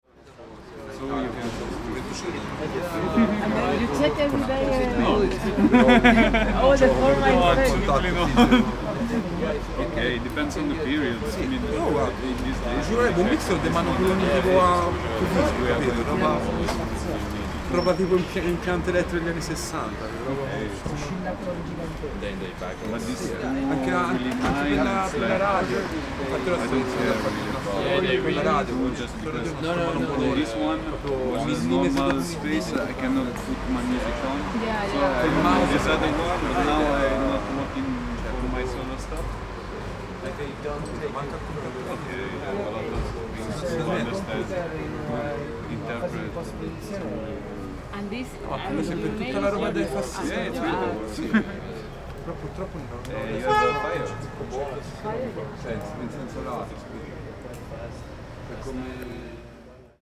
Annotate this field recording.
30.04.2009 22:45 break between concerts by gilles aubry, gill arno, michael northam, sidewalk, people talking